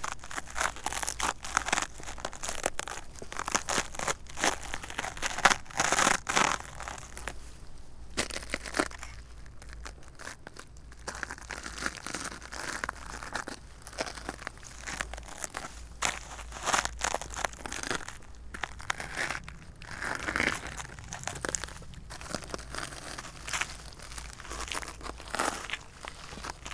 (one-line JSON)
{"title": "lichens on stones, Portugal - lichens on stones", "date": "2012-07-19 16:30:00", "latitude": "40.85", "longitude": "-8.19", "altitude": "1050", "timezone": "Europe/Lisbon"}